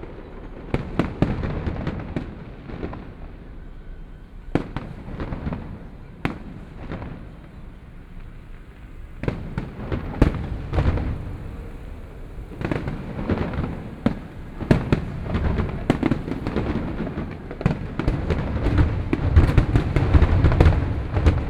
Kaohsiung City, Taiwan - Fireworks sound
Fireworks sound, Traffic Sound, In the parking lot
Sony PCM D50+ Soundman OKM II